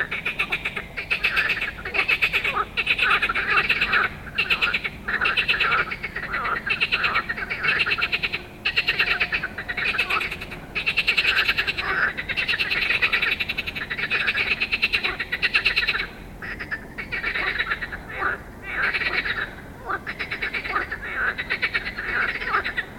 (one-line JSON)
{"title": "Ziekebeemdenstraat, Mechelen, België - Vrouwvlietkikkers", "date": "2020-05-27 22:34:00", "description": "Frogs in the night, Zoom H4n Pro", "latitude": "51.04", "longitude": "4.49", "altitude": "4", "timezone": "Europe/Brussels"}